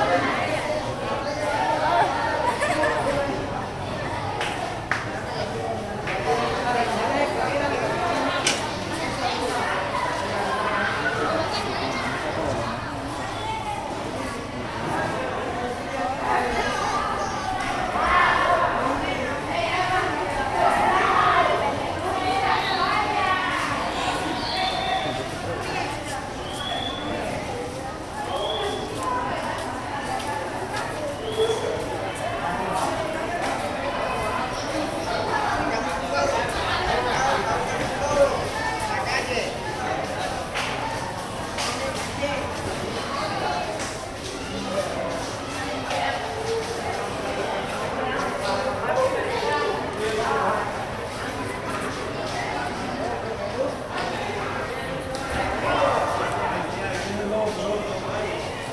Students finishing their day at the courtyard of Colegio Pinillos in Mompox
Bolívar, Colombia, 21 April